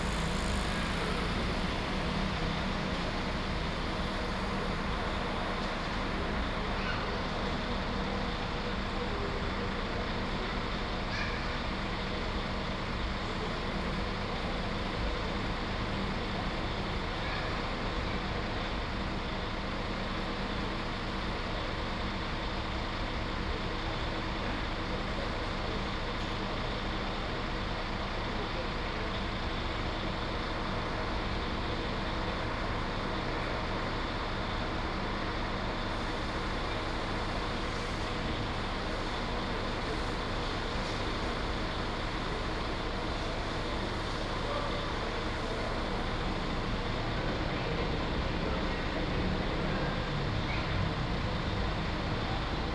The regional bus terminal on a spring Saturday morning. Binaural mics / Tascam DR40
Athina, Greece, 14 May 2016, 10:15